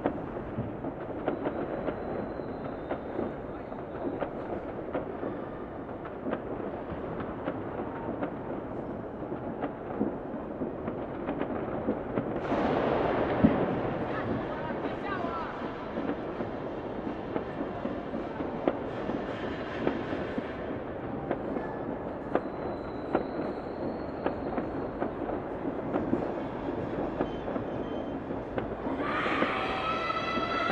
NEW YEAR Fireworks 1800-078 Lisboa, Portugal - 2021 NEW YEAR Fireworks
New year 2021 fireworks. Recorded with a SD mixpre and a AT BP4025 (XY stereo).